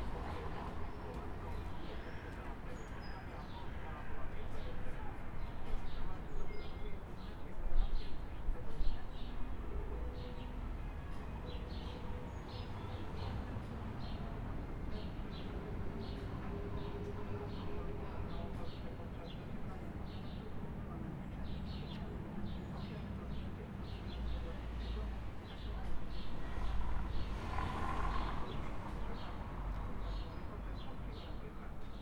Wroclaw, Old Town district, backyard - downtown backyard
sounds coming from many open windows in the apartment building, people listening to the radio, having dinner, cleaning windows
Wrocław, Poland, May 2012